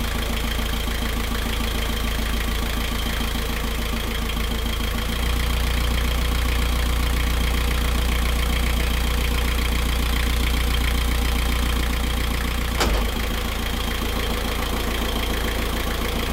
Nowe Warpno, Polska - boat arriving to port
Nowe Warpno, Poland, 20 August 2015